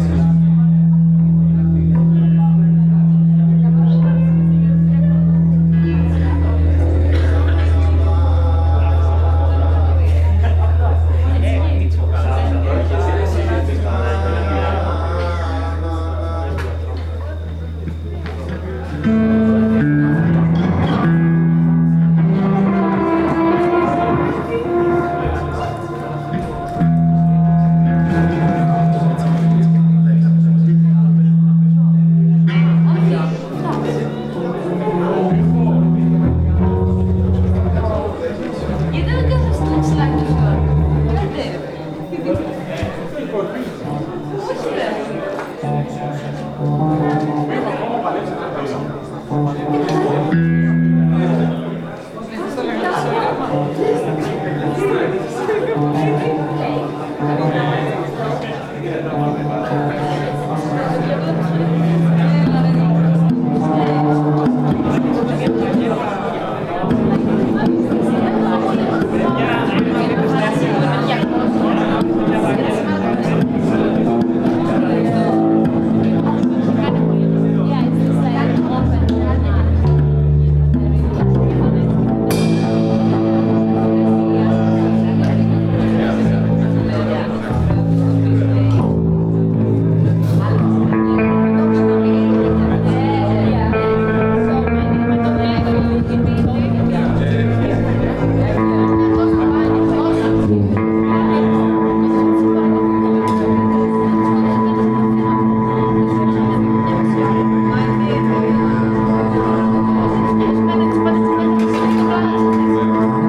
φοιτητικό κέντρο πανεπιστημιου βουτών, Iraklio, Greece - primal jam
You're listening to a primal, unplanned jam that took place in fititiko kendro, university of Crete. The jam was organized by a group of people in the context of a festival called Makrovoutes. People who attended the festival contributed with guitars, drums, lute and other instruments. I used an h1n zoom recorder.